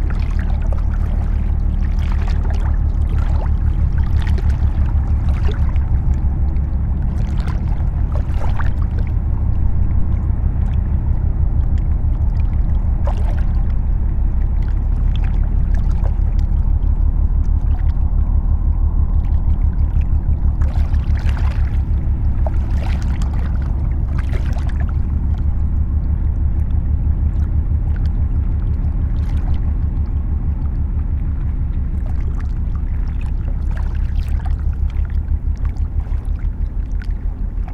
Sahurs, France - Boat
A boat is passing by on the Seine river and an hopper dredger is cleaning constantly the river bed.
18 September, 18:00